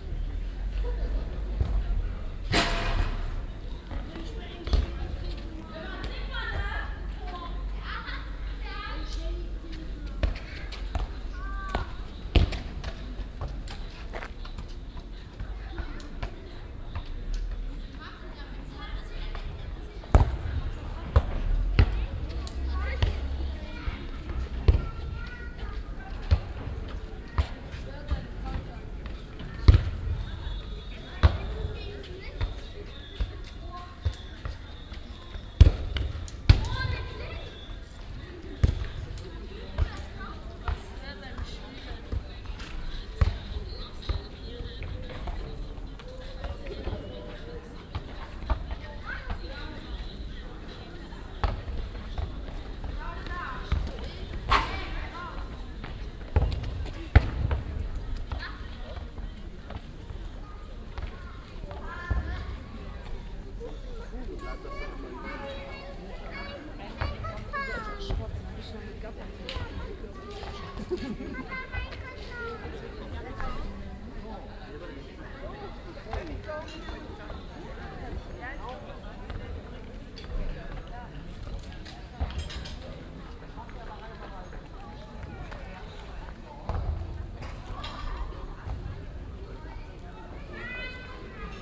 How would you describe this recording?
mittags auf dem innenhof, fussballspielende kinder, geschirrklappern und gespräche der gastronomiegäste, kleinkinder an den spielgeräten, soundmap nrw: topographic field recordings, social ambiences